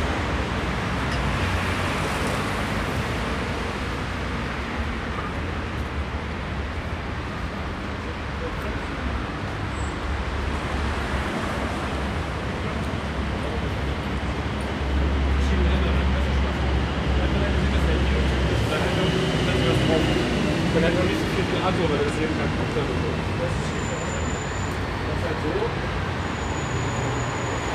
Prinzenallee, Berlin - in front of OKK (Organ of Critical Arts), traffic, passers-by.
[I used the Hi-MD-recorder Sony MZ-NH900 with external microphone Beyerdynamic MCE 82]